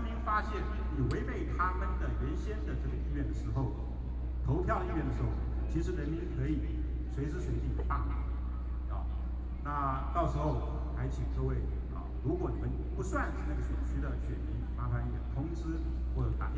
Jinan Rd., Taipei City - Protest Speech
Former deputy chief editor of the newspaper, Known writer, Witty way to ridicule the government's incompetence, Binaural recordings, Sony PCM D50 + Soundman OKM II
Zhongzheng District, Taipei City, Taiwan, 10 October 2013, 12:16